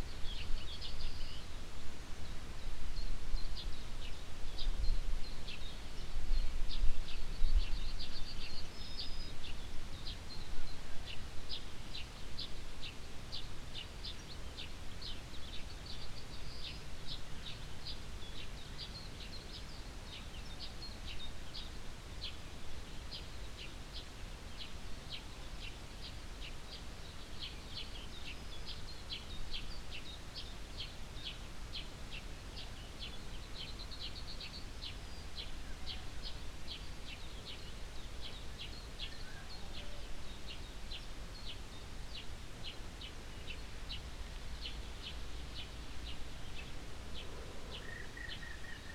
At a farmhouse in the Lithuanian countryside in the morning time. The sounds of bird communication, a mellow morning wind coming uphill from the fields, no cars, no engines
international sound ambiences - topographic field recordings and social ambiences